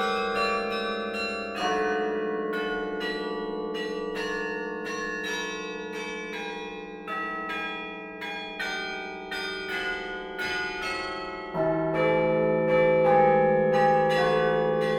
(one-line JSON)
{"title": "Nivelles, Belgique - Nivelles carillon", "date": "2010-10-08 15:05:00", "description": "Recording of a carillon concert in the Nivelles collegiale church. Performer is Toru Takao, a japanese master of carillon living in Germany. He's playing Danse Macabre from Saint-Saëns.", "latitude": "50.60", "longitude": "4.32", "altitude": "101", "timezone": "Europe/Brussels"}